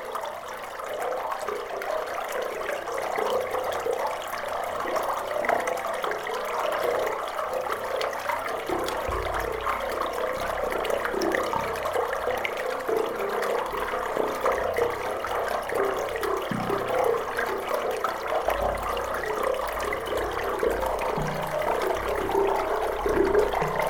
Differdange, Luxembourg - The singing pipe
The same pipe as above, but 5 meters more far and playing a little bit with water. I'm in love with this pipe !
15 February 2015, 21:25